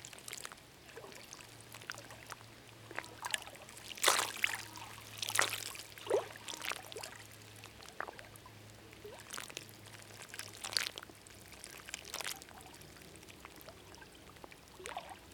{"title": "Baie de mémard, Aix-les-Bains, France - Vaguelettes", "date": "2000-09-15 11:00:00", "description": "Vaguelettes dans un tapis de roseaux brisés. Enregistreur Teac Tascam DAP1 extrait d'un CDR consacré aux vagues du lac du Bourget, Allures de vagues.", "latitude": "45.71", "longitude": "5.89", "altitude": "232", "timezone": "Europe/Paris"}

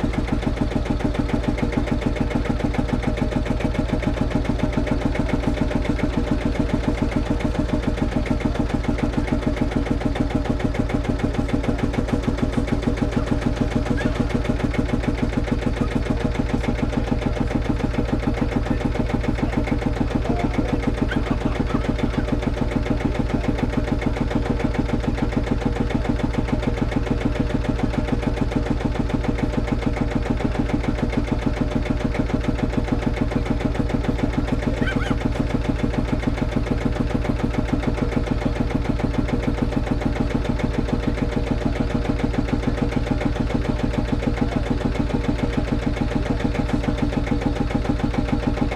{"title": "Thwing, UK - Field Marshall Tractors", "date": "2016-06-25 13:30:00", "description": "Recorded at a Farm machinery and Tractor sale ... the tractors are warmed up prior to the auction ... one tractor fires up followed by another at 04:40 approx. ... lavalier mics clipped to baseball cap ... focus tends to waiver as my head moves ...", "latitude": "54.11", "longitude": "-0.42", "altitude": "107", "timezone": "Europe/London"}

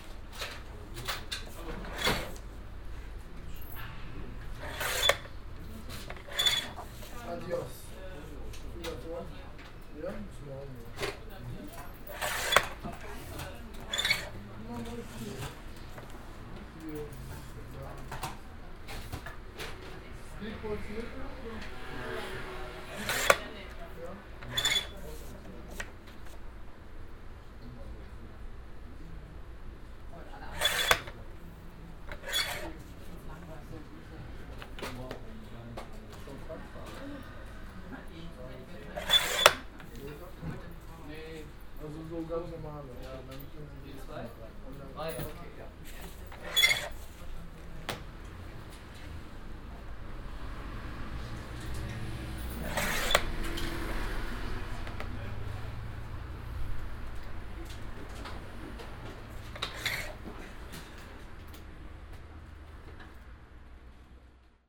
{"title": "cologne, venloerstr, digitaldruck, cutter", "date": "2008-06-26 17:55:00", "description": "manuell bedienter papierschneider im digitaldruck geschäft\nsoundmap nrw - social ambiences - sound in public spaces - in & outdoor nearfield recordings", "latitude": "50.94", "longitude": "6.94", "altitude": "56", "timezone": "Europe/Berlin"}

